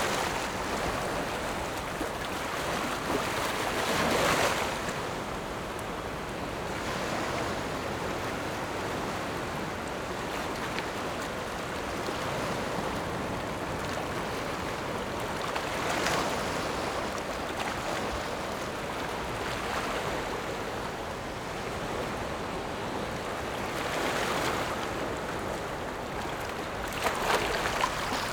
頭城鎮大坑里, Yilan County - Standing on the rocks
Standing on the rocks, Sound of the waves, In the beach, Hot weather
Zoom H6 MS+ Rode NT4
26 July 2014, ~18:00